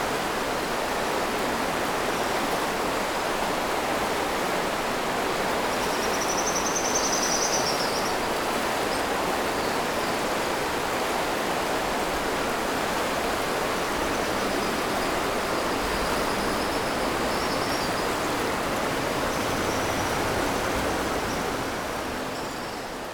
Annong River, 三星鄉大隱村 - Streams and swallows
Streams and swallows, Stream after Typhoon, Traffic Sound, Under the bridge
Zoom H6 MS+ Rode NT4
Yilan County, Sanxing Township, 大埔, 25 July